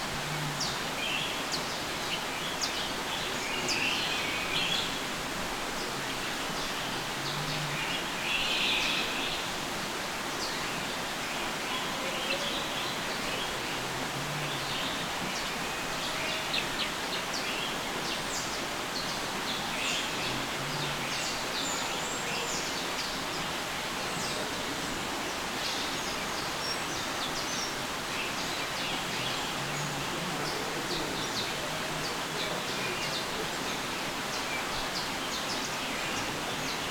Tropical House Cologne Zoo, Cologne, Germany - Tropical House Cologne Zoo
Record from inside the tropical house of Cologne Zoo /w Zoom H6 Black
8 March 2022, 12:30